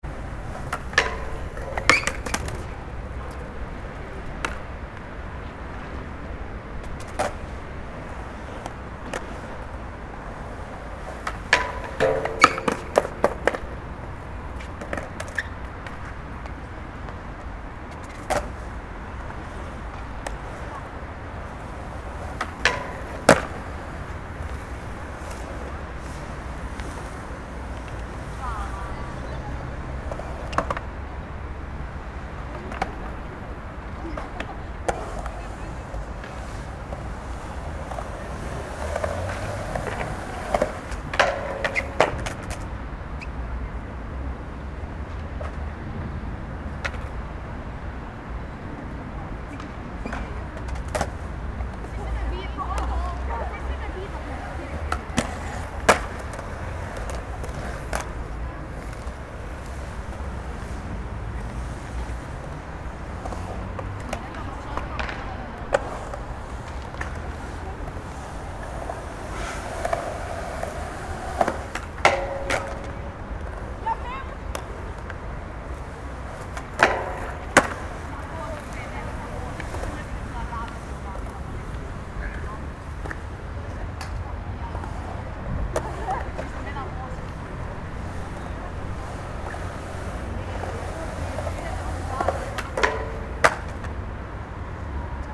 Skate Park, Nova Gorica, Slovenia - Sounds of a skater and chatting
The sound of a lonely skater in the skate park in Nova Gorica with some distant chatting.